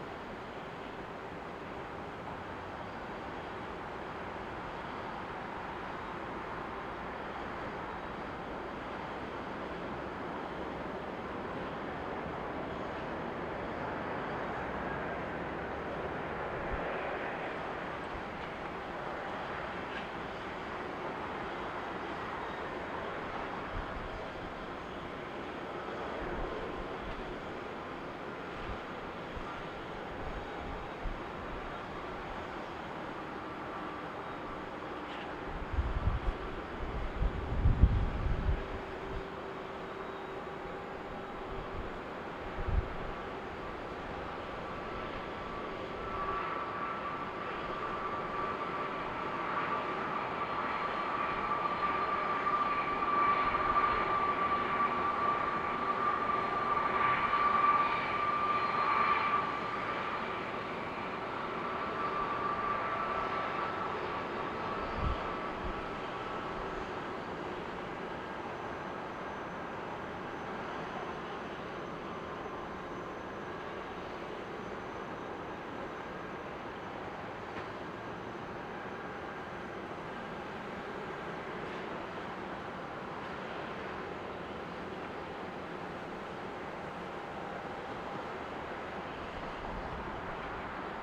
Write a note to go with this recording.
The sounds of landings and take offs on runway 12R at Minneapolis/St Paul international airport from the Terminal 1 parking ramp. The sounds of the airport ramp and car traffic leaving the terminal can also be heard. Recorded using Zoom H5